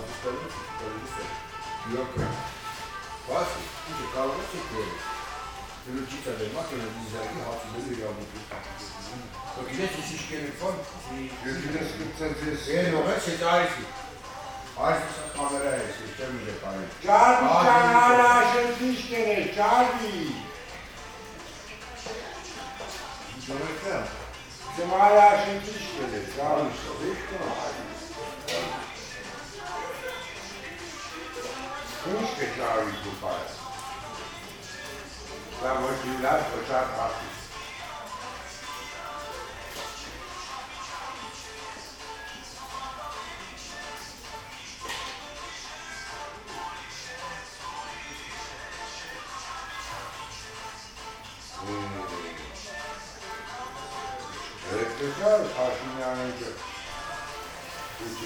Gyumri, Arménie - Bakery pastry
Into a sad bakery pastry, an old client is discussing with the old baker. It's the local market day. The baker looks so sad that Droopy character is a joker beside to this old man.
9 September 2018, 09:30